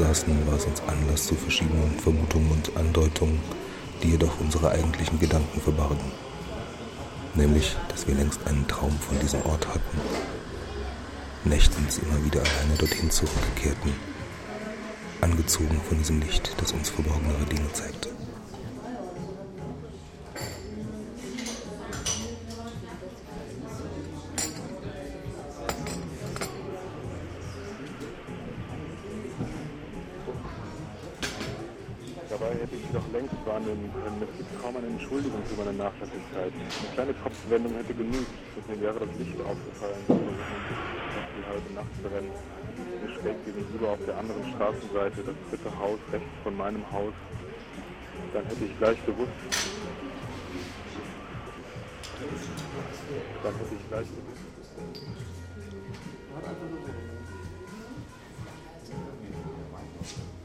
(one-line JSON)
{"date": "2008-01-09 13:22:00", "description": "monate lang brannte hier nach einbruch der dunkelheit hinter vorhängen ein rotes licht. seit einigen wochen bleibt das fenster dunkel.", "latitude": "52.49", "longitude": "13.42", "altitude": "45", "timezone": "Europe/Berlin"}